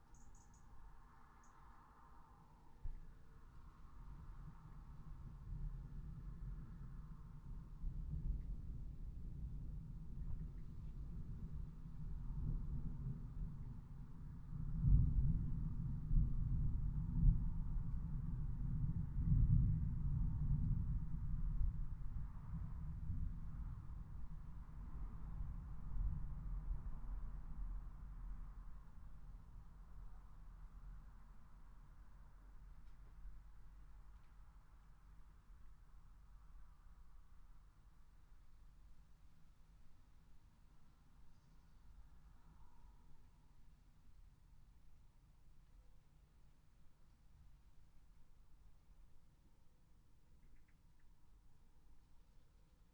Luttons, UK - thunderstorm approaching ...
thunderstorm approaching ... xlr sass to zoom h5 ... bird song ... calls ... wood pigeon ... house martin ... tawny owl ... robin ... background noise ... traffic ...
Malton, UK, 7 September, 19:30